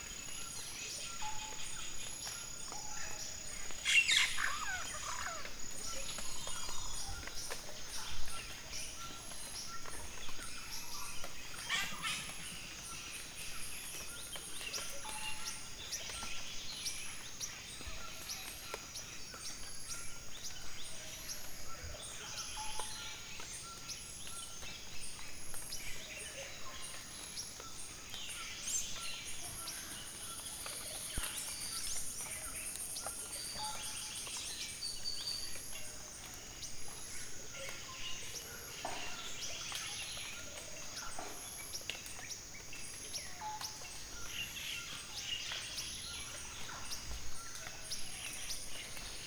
Reserva Nacional Tambopata, Peru - Rainforest atmospere
Rainforest atmosphere recorded in Tambopata National Reserve, Perú.